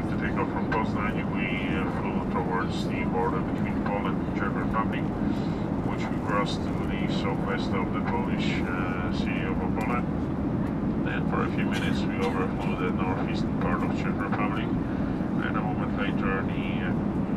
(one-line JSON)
{"title": "Airspace over Hungary - flight info update", "date": "2012-10-04 00:31:00", "description": "the pilot updating the passengers about the flight details in English.", "latitude": "47.72", "longitude": "18.97", "altitude": "443", "timezone": "Europe/Budapest"}